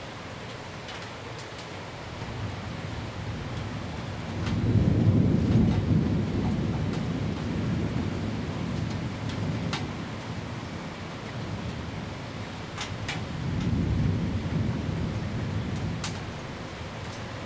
Rende 2nd Rd., Bade Dist. - Afternoon thunderstorms
Afternoon thunderstorms
Zoom H2n MS+XY+ Spatial audio
Bade District, Taoyuan City, Taiwan